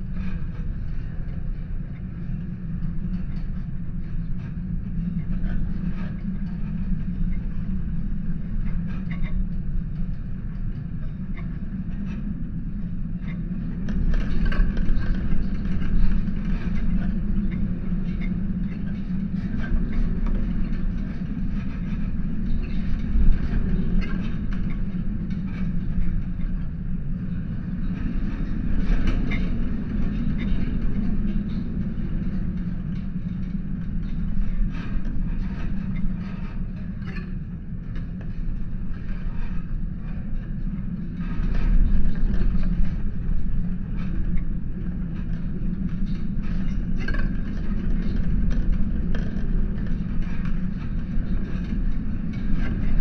Mikieriai, Lithuania, fallow-deers park fence
contact mick'ed fence of fallow-deers park